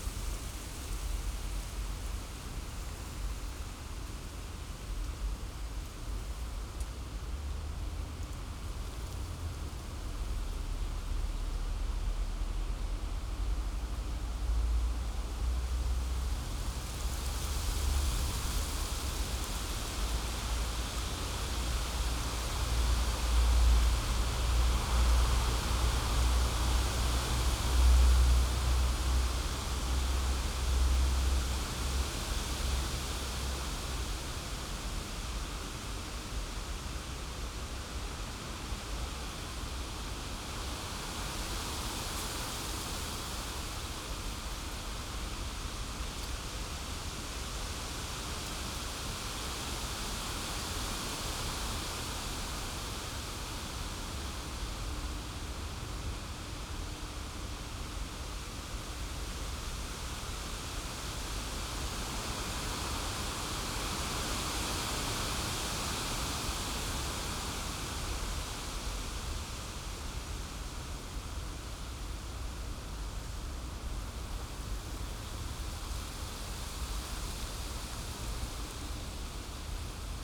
Beermannstr., Alt-Treptow, Berlin - wind in birches, night ambience
night ambience at Beermanstr., wind in birch trees.
(Sony PCM D50, DPA4060)